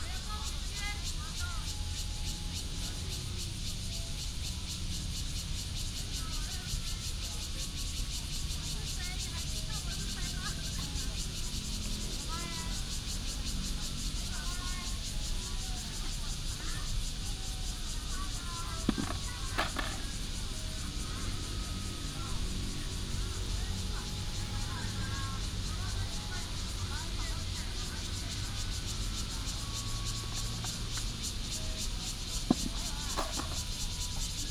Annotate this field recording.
in the Park, traffic sound, Cicadas, Garbage clearance time, Binaural recordings, Sony PCM D100+ Soundman OKM II